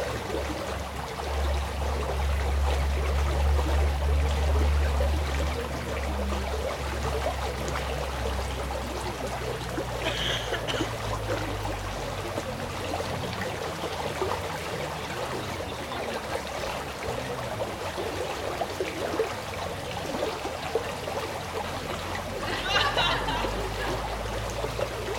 Lonlay-l'Abbaye, France - Summer evening at Abbaye de Lonlay
Listening by the edge of a stream that passes the abbey, campers chat and laugh on the other side by a small derelict barn and occasionally cross over a small pedestrian bridge to use the toilets and return to their tents erected in the abbey gardens. As is the custom in this part of Normandy the bells give a two tone, descending ring for every quarter-of-an-hour that passes. (Fostex FR2-LE and Rode NT4a Stereo Microphone).